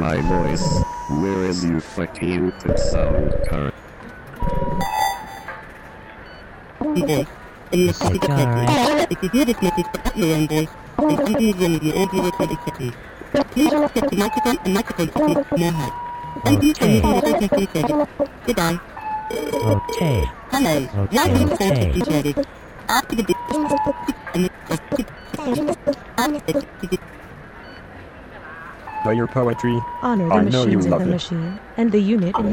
RadioFreeRobots canibal'aibot Mains d'Œuvres